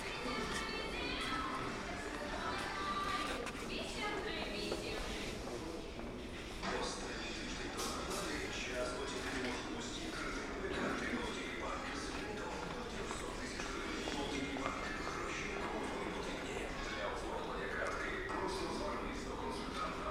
Epicentr, Zaporiz'ke Hwy, . Dnipro, Ukraine - Epicentr [Dnipro]
Dnipropetrovsk Oblast, Ukraine, 27 May 2017